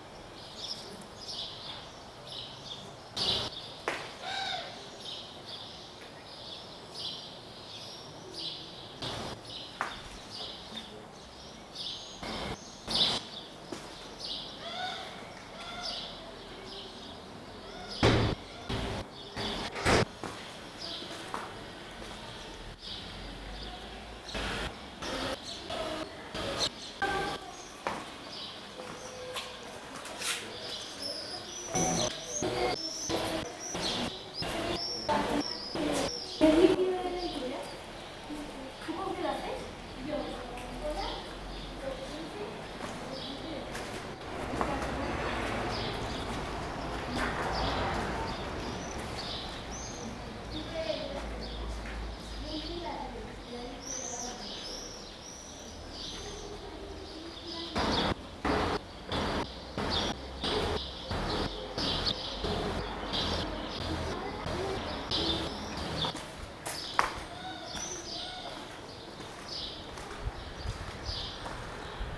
Vallecas, Madrid - Fibonacci Flash-Forward [F(0)-F(11)] #WLD2018
Acoustic Mirror: Fibonacci Flash-Forward [F(0)-F(11)] #WLD2018 ---
Go out on a soundwalk. Listen. Walk. Make your steps follow a
Fibonacci sequence. Listen to your steps. Listen to the
numbers. Listen a few steps ahead into the future. Walk a few steps
ahead into the future.